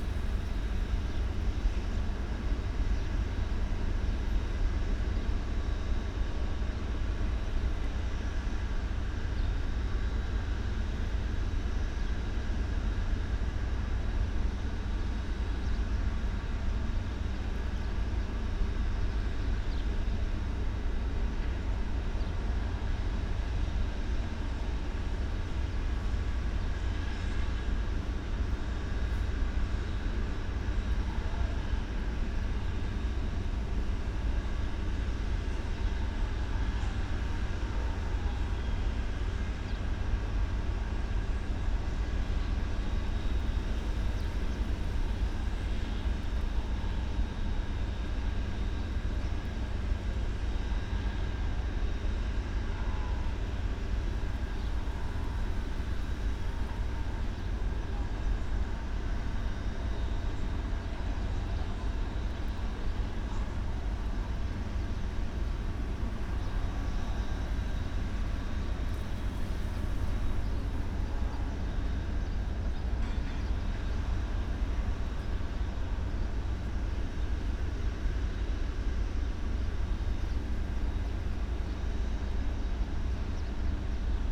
Delimara, Marsaxlokk, Malta - Delimara power station hum
above Delimara power station, Delimara / Marsaxlokk, Malta. Power station at work, hum
(SD702 DPA4060)